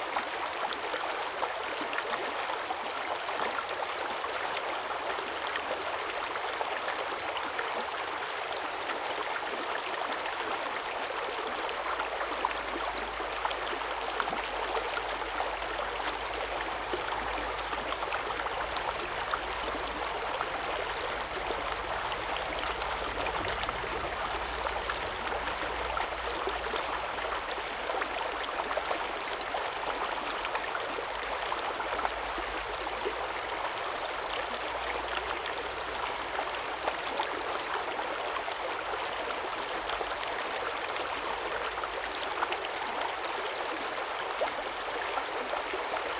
中路坑溪, 桃米里 - Stream sound
The sound of water streams
Zoom H2n MS+XY
Puli Township, 投68鄉道73號